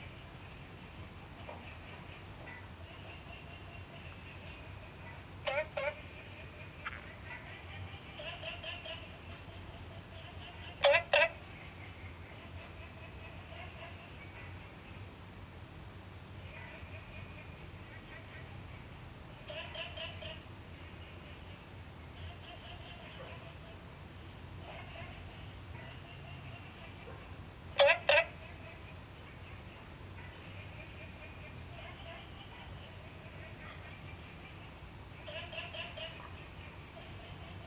青蛙阿婆ㄟ家, 桃米里, Taiwan - Frog calls
Frog calls, Small ecological pool
Zoom H2n MS+XY